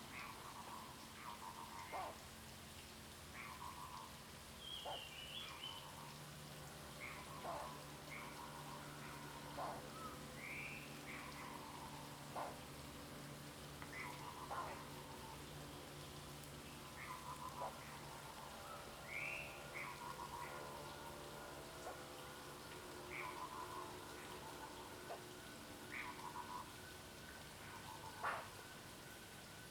Shuishang Ln., Puli Township 桃米里 - Bird sounds
Morning in the mountains, Bird sounds, Traffic Sound
Zoom H2n MS+XY
Puli Township, 水上巷, 26 March 2016